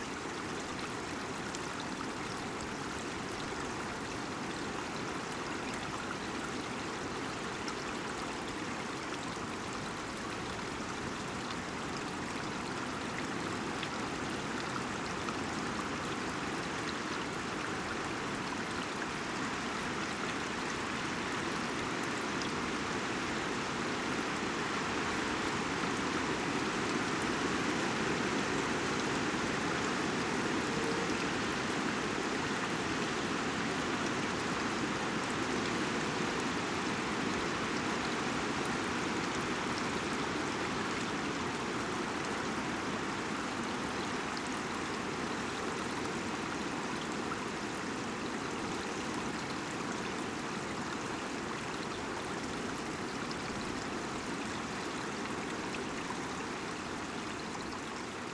Valley with streamlets. Winds roaring above.